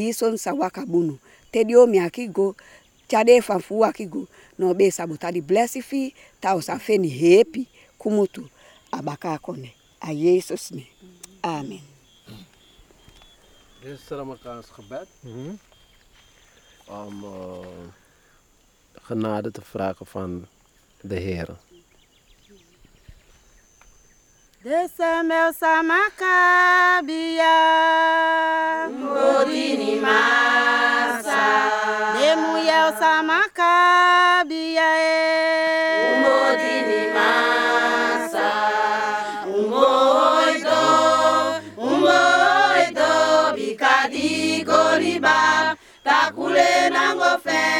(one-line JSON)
{"title": "Boven-Suriname, Suriname - Futunakaba village women singing", "date": "2000-05-07 09:14:00", "description": "Futunakaba village women singing. These women were participating in a project to learn them sustainable agriculture and hpow to sell their surplus on local markets. So for the first time they got money in their purse. Some men were happy with this extra income, others objected to it: women with thwie own money could get to much confidence and a big mouth. I asked a woman what she woulkd buy from her first well-earned money. She answered: \"Matrassi!\"", "latitude": "4.23", "longitude": "-55.44", "altitude": "78", "timezone": "America/Paramaribo"}